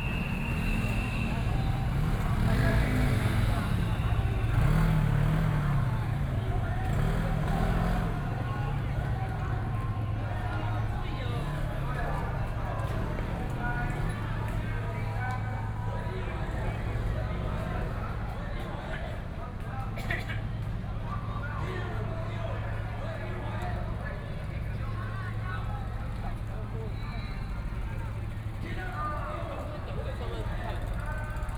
Riot police in violent protests expelled students, All people with a strong jet of water rushed, Riot police used tear gas to attack people and students, Students and people flee
24 March 2014, ~07:00